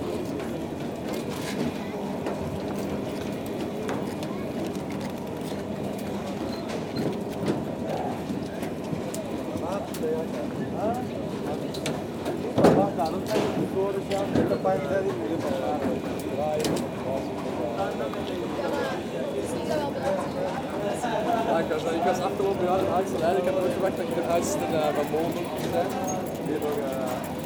Antwerpen, Belgique - Linkeroever ferry
The Linkeroever ferry, crossing the Schelde river. The boat is arriving, people go out and in essentially with bicycles, and the boat is leaving.
Antwerpen, Belgium, 4 August 2018